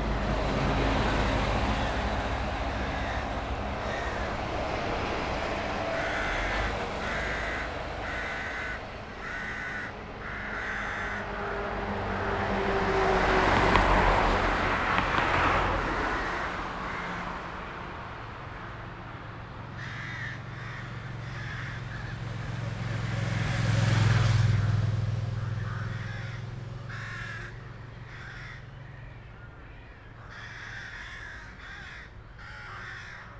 Kleiner Park nähe Rheinufer; Straßen- und Krähenlärm
Breisach am Rhein, Germany, 7 May 2019